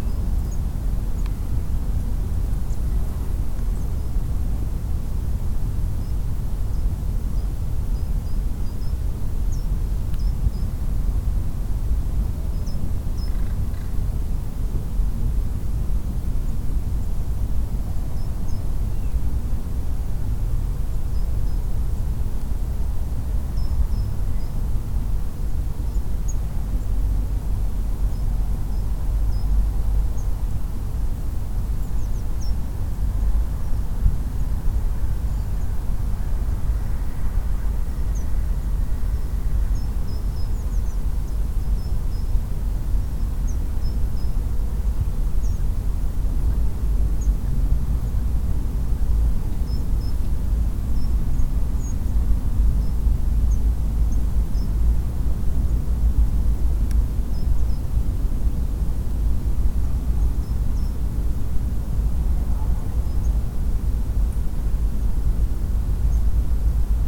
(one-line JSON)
{"title": "Landschaftspark Johannisthal, Berlin, Germany - Noise pollution at Landschaftspark Johannisthal", "date": "2021-11-28 13:30:00", "description": "Sitting in the meadow with microphone facing to the park. Listing to birds left and right seemingly undisturbed by noise pollution.\nRecorder: Tascam DR-05", "latitude": "52.44", "longitude": "13.52", "altitude": "32", "timezone": "Europe/Berlin"}